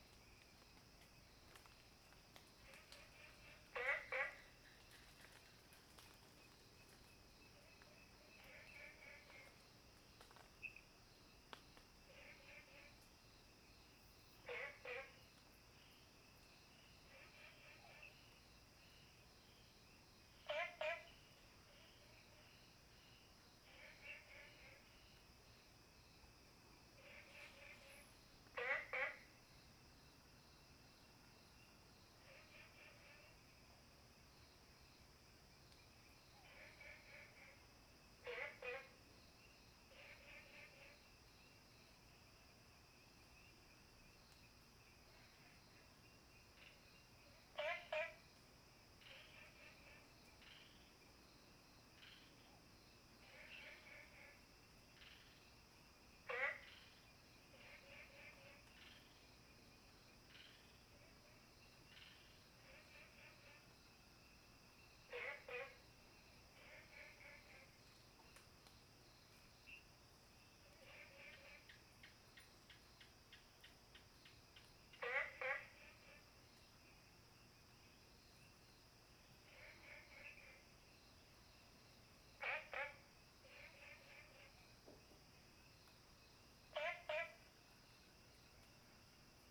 綠屋民宿, Nantou County - Frogs chirping
Frogs chirping, at the Hostel
Zoom H2n MS+XY